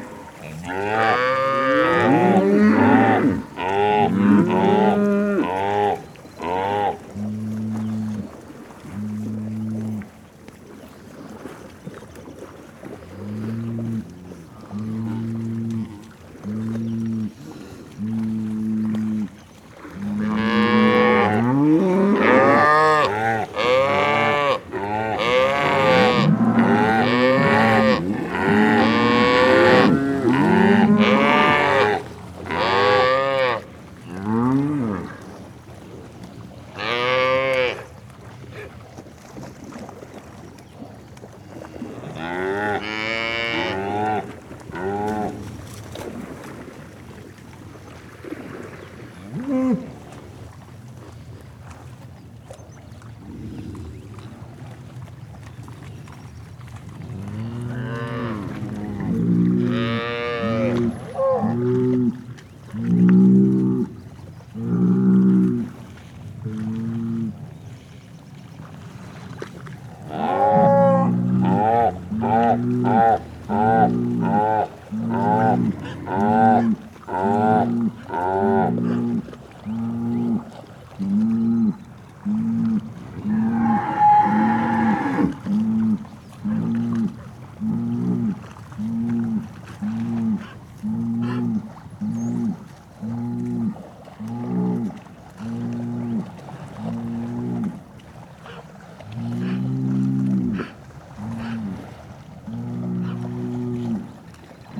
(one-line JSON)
{"title": "Arivaca Desert (Arizona) - Cows’ choir around a pound in the desert", "date": "2021-08-16 12:00:00", "description": "Cattle is drinking and swimming while it’s hot in the desert of Arizona, in the area of Arivaca.\nRecorded by a Sound Devices MixPre6\nWith a ORTF Schoeps Setup CCM4 x 2 in a windscreen by Cinela\nSound Ref: AZ210816T001\nRecorded on 16th of August 2021\nGPS: 31.661166, -111.165792", "latitude": "31.66", "longitude": "-111.17", "altitude": "1120", "timezone": "America/Phoenix"}